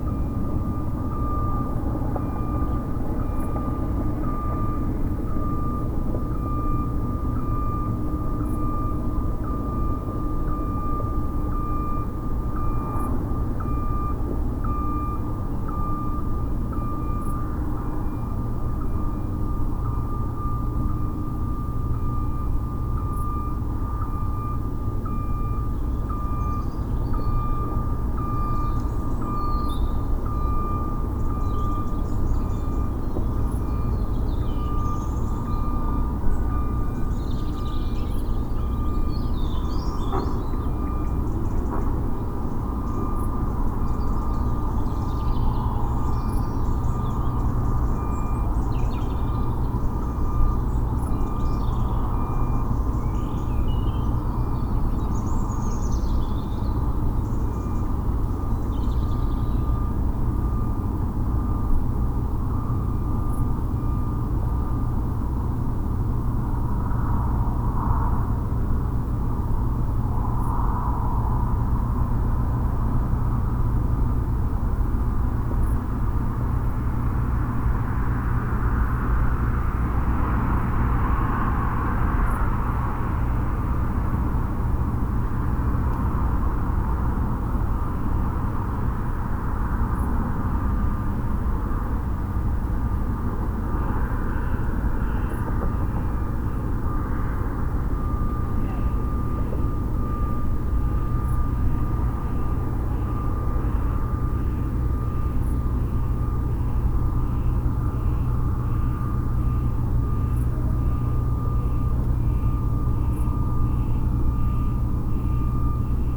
Sounds of the Night - 2, Malvern, UK
This recording starts at 2 am on a busy night with workmen removing the stage after a show, traffic, dogs, voices, muntjac, trucks, jets and alarms. The mics are on the roof again facing east into the wind towards the Severn Valley with the slope of the land and the breeze bringing the sounds up from below. Recorded in real time by laying the mics on the roof which is angled at 45 degrees. This seems to exaggerate the stereo image and boost the audio maybe by adding reflected sound. The red marker on the map is on the area where most of the sounds originate.
MixPre 6 II with 2 Sennheiser MKH 8020s in a home made wind jammer.
Worcestershire, England, United Kingdom